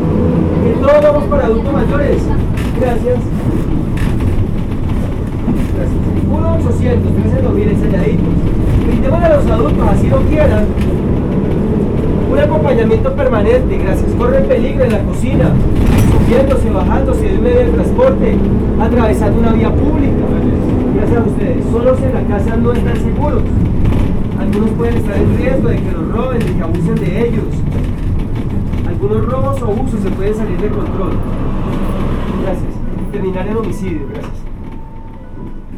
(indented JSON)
{
  "title": "Universidad Nacional de Colombia, Avenida Carrera 30 #, Bogota, Cundinamarca, Colombia - FOr the old people",
  "date": "2013-05-22 07:27:00",
  "description": "Alguien que vende esferos para mantener a unos ancianos.",
  "latitude": "4.63",
  "longitude": "-74.09",
  "altitude": "2558",
  "timezone": "America/Bogota"
}